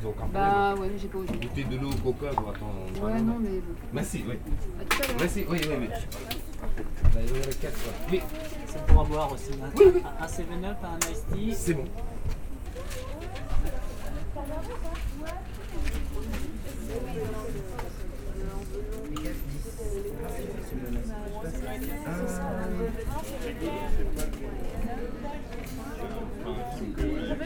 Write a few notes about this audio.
Near the cinema, there's a food truck with a long waiting line : this could be a good presage for good food ! Indian people prepair indian wrap food. Into the line, I'm waiting to buy my meal. Some persons speak about the good food, some other the next film upcoming. It's a classical ambience of the Tours city, outside from the touristic places.